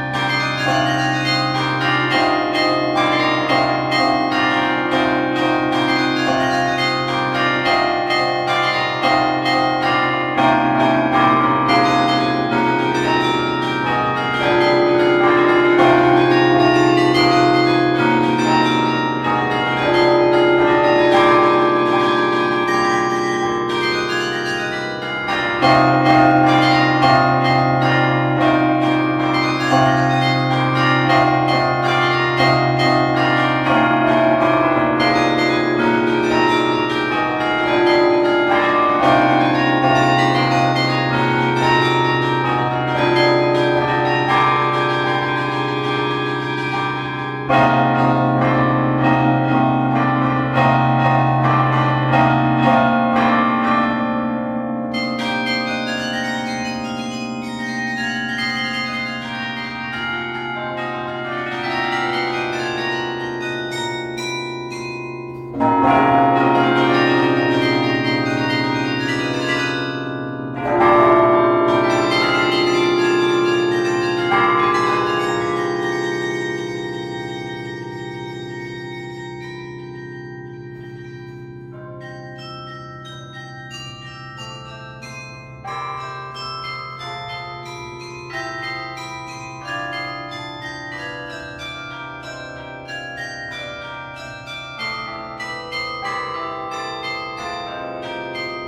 Bruxelles, Belgique - Brussels carillon
Gilles Lerouge, playing at the Brussels carillon on the Christmas Day. He's a player coming from Saint-Amand les Eaux in France.
Big thanks to Pierre Capelle and Thibaut Boudart welcoming me in the tower.